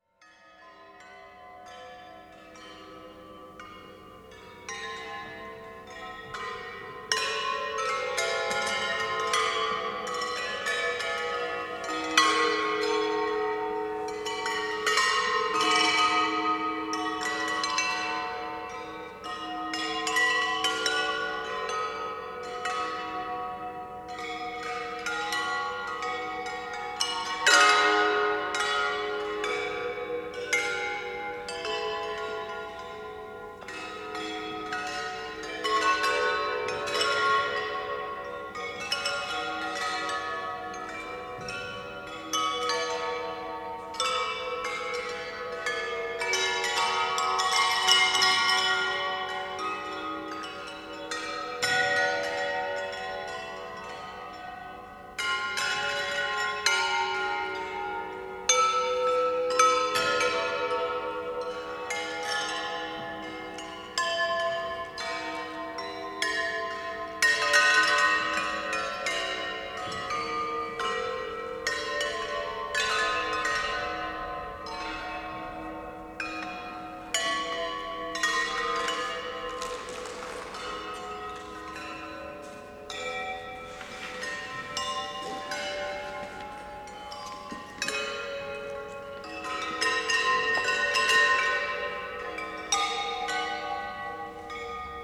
KADOC Chapel, Frederik Lintsstraat, Leuven, Belgium - Celeste Boursier-Mougenot Clinamen installation
Sound installation "Clinamen" by French artist Celeste Boursier-Mougenot in the chapel of KADOC research centre. The installation was part of the program for the Hear Here festival.